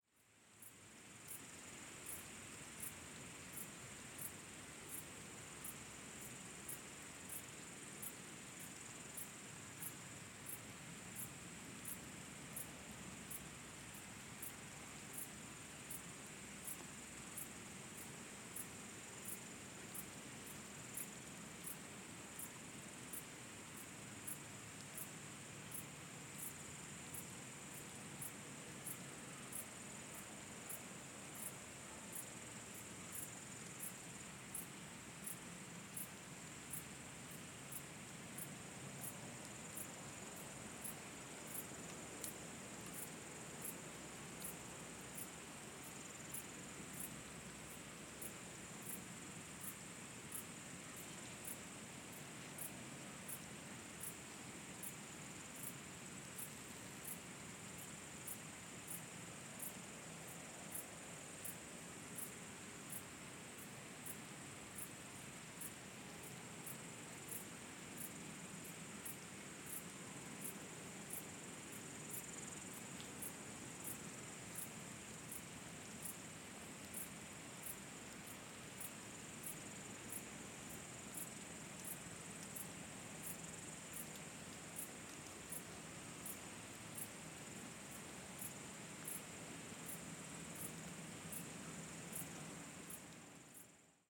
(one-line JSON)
{"title": "bush crickets at the seashore, Estonia", "date": "2010-07-22 22:36:00", "description": "bush crickets by the sea shore (for Veljo)", "latitude": "57.95", "longitude": "24.39", "altitude": "1", "timezone": "Europe/Tallinn"}